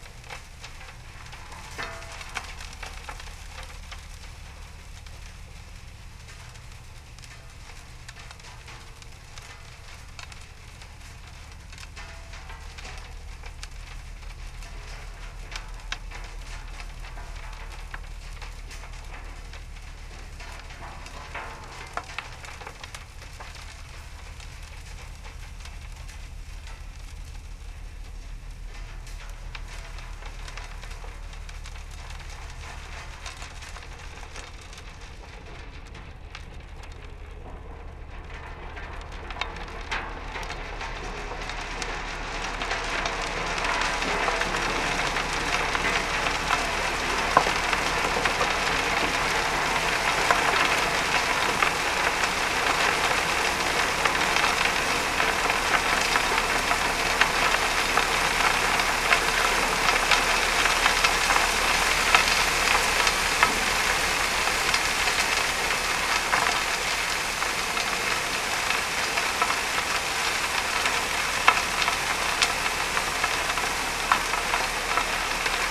Yville-sur-Seine, France - Hopper dredger emptying
An hopper dredger cleans the Seine bed every day. One of this boat, called the Jean Ango, is berthed here like on the left on the aerial view. This hopper dredger is pushing the collected stones in an abandoned quarry, using two big metal tubes. Pushing the stones makes enormous noises and a very staggering nuisance for the neighbours. The recording contains in first the end of a cuve and at the middle of the time, a new tank. It was hard to stay here as the sound level was high. The boat volume is 5000 m³. It makes this nuisance during a very long time and also by night.